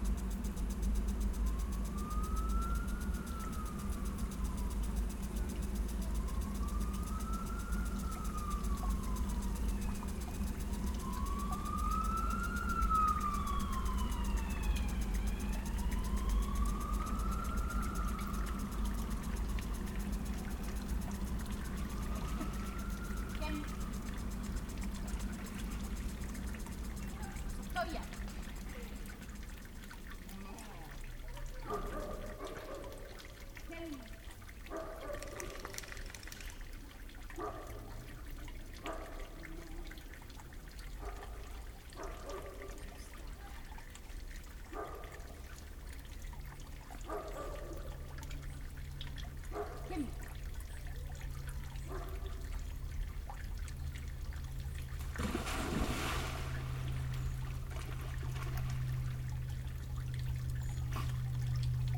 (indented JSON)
{
  "title": "Prague, Czech Republic - Na Cibulce",
  "date": "2012-08-02 16:09:00",
  "description": "Soundscape from the park Na Cibulce, water, lake and birds.",
  "latitude": "50.06",
  "longitude": "14.35",
  "altitude": "331",
  "timezone": "Europe/Prague"
}